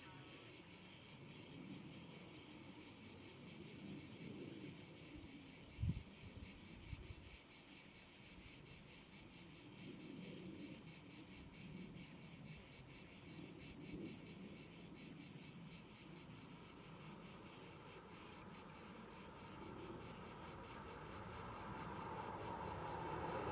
Barbat, Rab, Croatia, Pudarica - Sunny
Primorsko-Goranska županija, Hrvatska, 28 June